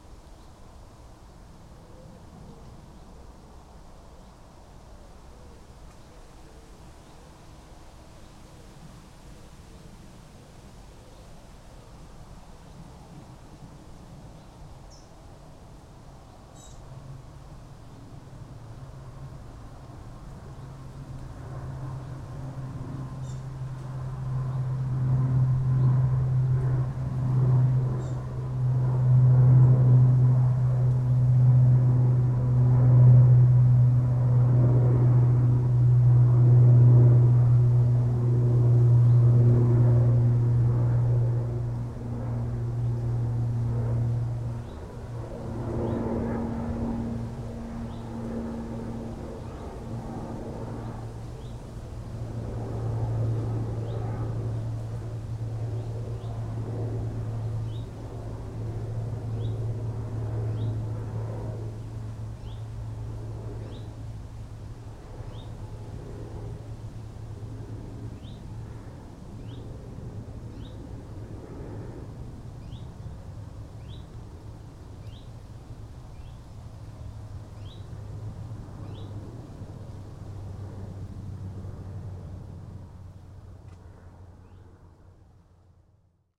Off Whorlton Lane, Woolsington, UK - Area around source of Ouseburn
Recording in trees on bridle path off Whorlton Lane, near source of Ouseburn river near Newcastle Airport. Bird call in trees, wind in trees, aeroplane overhead. Recorded on Sony PCM-M10
Newcastle upon Tyne, UK, 21 August 2016